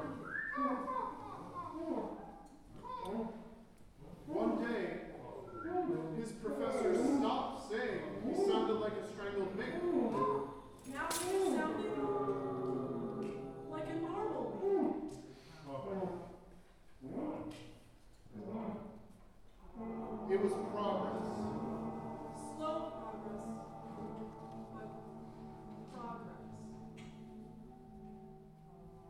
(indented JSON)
{
  "title": "Voxman Music Building, Iowa City, IA, USA - Horn Choir Live Sound Painting by U Iowa Horn Choir and Audience",
  "date": "2019-02-10 16:50:00",
  "description": "This is a live composition described as a 'sound painting' performed by a horn class at the University of Iowa. The performance utilized the French Horn and its pieces, the voice, movement, and noises generated by the audience. This was recorded with a Tascam DR MKIII. This was one portion of a concert lasting approximately 1 hour and 15 minutes in total.",
  "latitude": "41.66",
  "longitude": "-91.53",
  "altitude": "212",
  "timezone": "GMT+1"
}